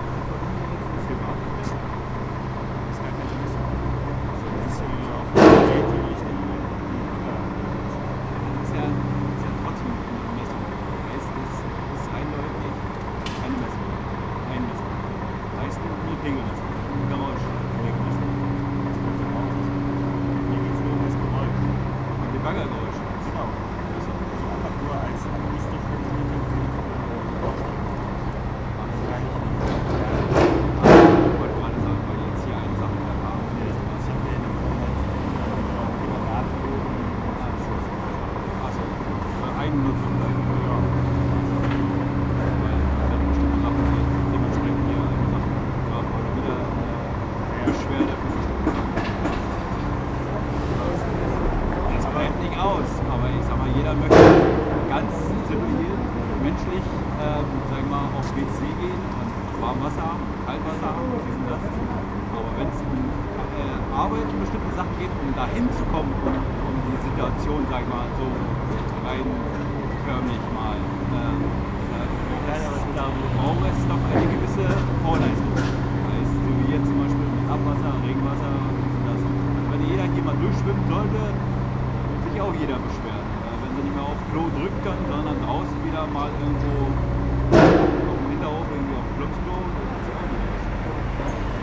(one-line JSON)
{"title": "berlin: friedelstraße - the city, the country & me: sewer works", "date": "2013-12-05 13:42:00", "description": "excavator loading a truck. site engineer asked me if I performed a noise level measurement - when I said no, he began to explain the works...\nthe city, the country & me: december 5, 2013", "latitude": "52.49", "longitude": "13.43", "altitude": "46", "timezone": "Europe/Berlin"}